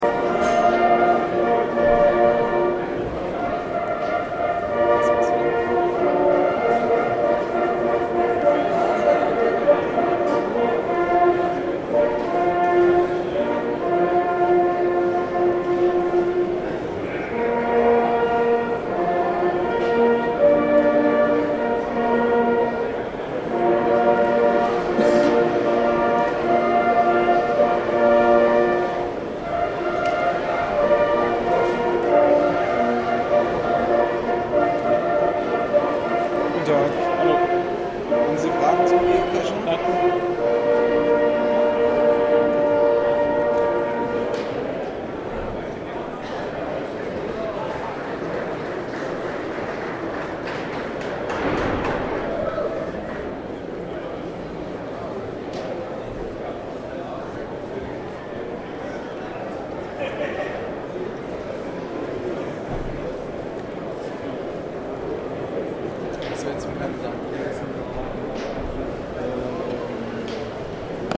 Jagd und Hund, Dortmund 2012
jagd, messe, jagdhörner, geocaching